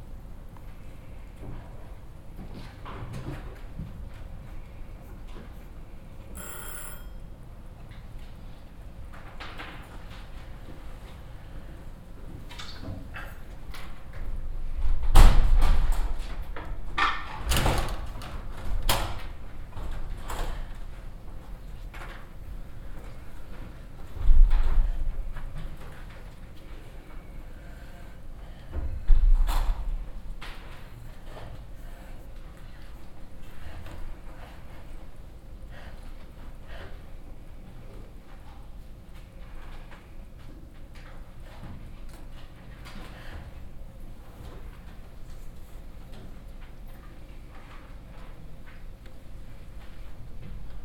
An egg timer signifying that all of the windows may now be closed.
Deutschland